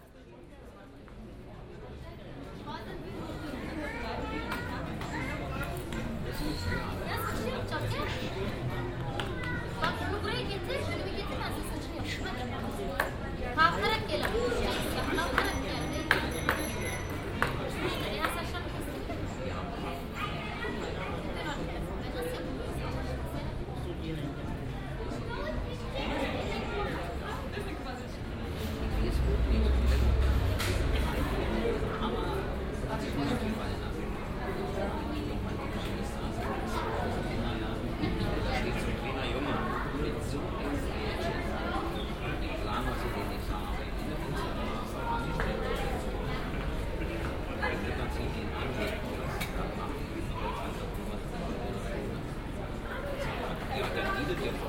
Berlin, Kotti, Bodegga di Gelato - Bodegga di Gelato, 15.07.07, 21:30
Eisdiele, Abend, ruhiger Winkel an einem unruhigen Ort
ice cream parlour, quiet corner at an unquiet place